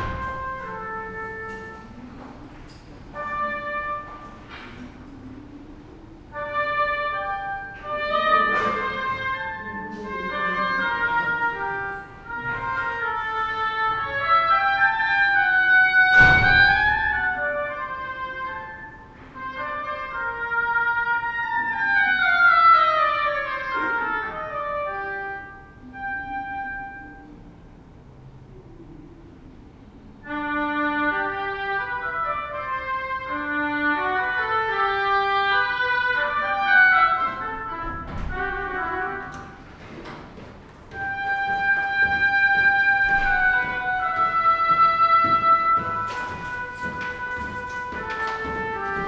Poznan, Fredry street, Grand Theater - practice
recorded in one of the corridors of the Grand Theatre in Poznan. Orchestra member practicing their instrument. A few employees walk across the corridor giving me suspicious looks. One of them sings a little tune. (sony d50)